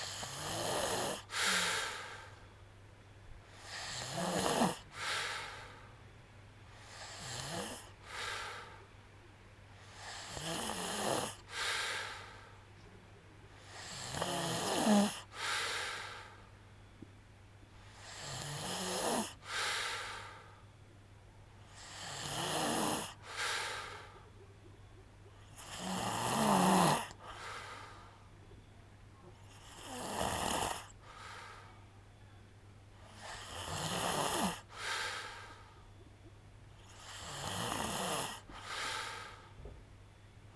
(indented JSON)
{
  "title": "Ulupınar Mahallesi, Çıralı Yolu, Kemer/Antalya, Turkey - Snoring",
  "date": "2017-07-30 01:11:00",
  "description": "Aylak Yaşam Camp, nighttime snoring sound",
  "latitude": "36.41",
  "longitude": "30.47",
  "altitude": "10",
  "timezone": "Europe/Istanbul"
}